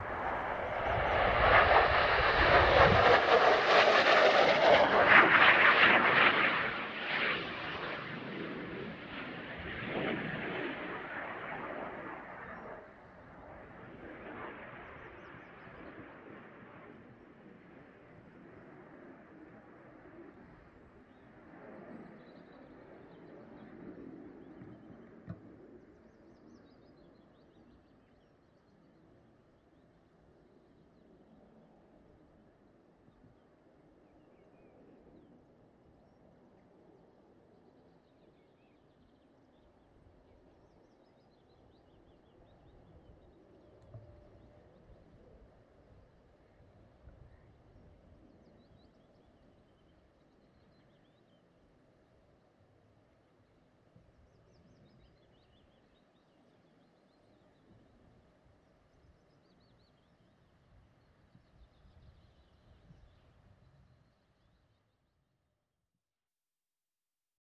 Kirkby Stephen, UK - Jet
Overhead jet crossing Smardale Fell on a sunny afternoon. Part of a set of sounds recorded and mixed by Dan Fox into a sound mosaic of the Westmorland Dales.
England, United Kingdom